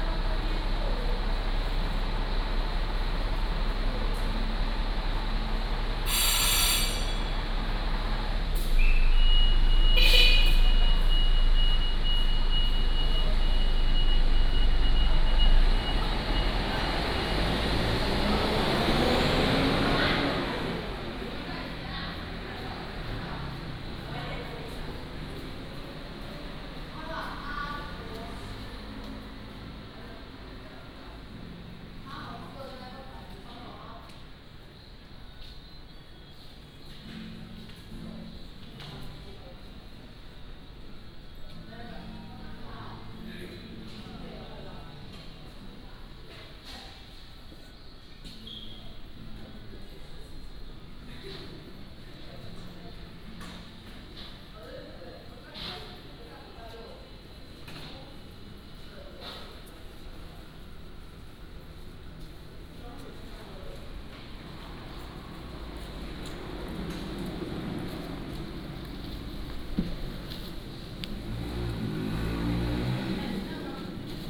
In the bus station hall, Traffic Sound